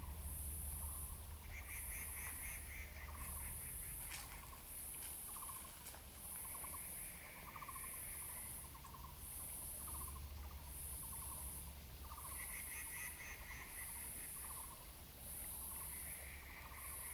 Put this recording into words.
Several kinds of birds sounded, Zoom H2n MS+XY